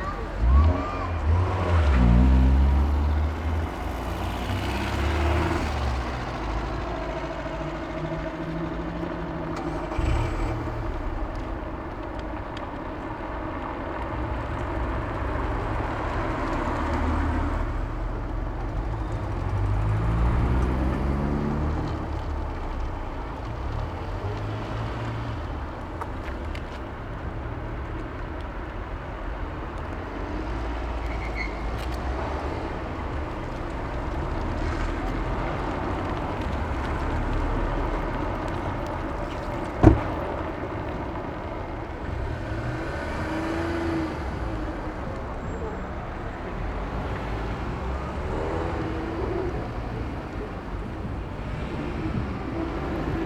Berlin: Vermessungspunkt Maybachufer / Bürknerstraße - Klangvermessung Kreuzkölln ::: 28.01.2011 ::: 17:01